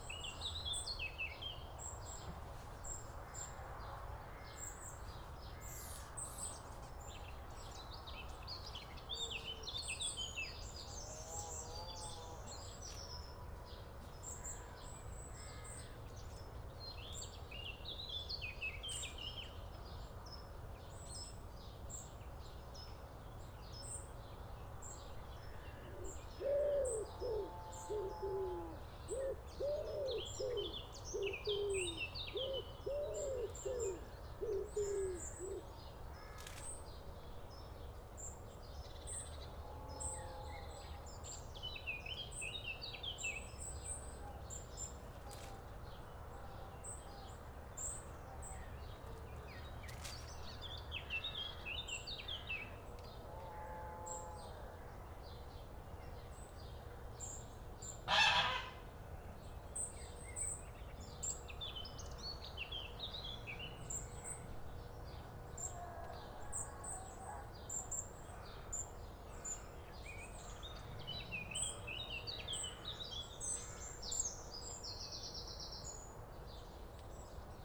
Saint-Gilles-des-Marais, France - 7am church bells
What is interesting in this recording is the changing tone of the bells after the initial three-ring signature. I imagine a different hammer/clapper is used to achieve the slightly 'phasey' and duller sounding ring for the continuous tolling that follows. Oh and at the beginning you can here the rustle of a field mouse curious as to what I was doing - very sweet!